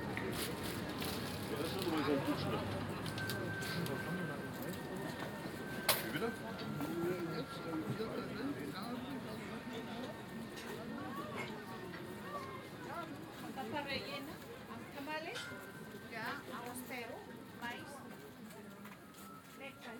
Broadwalk Dresden, Niemcy - (451) BI Christmas fair
Binaural recording of a walk through Christmas's fair stand.
Sony PCM-D100, Soundman OKM
Sachsen, Deutschland, 3 December, 15:31